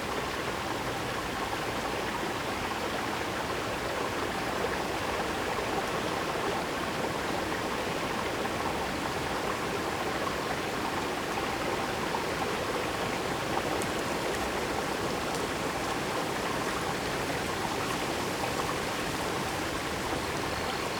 SBG, Les Llobateres - Monte
Ambiente en el monte, en lo alto de la riera de Les Llobateres.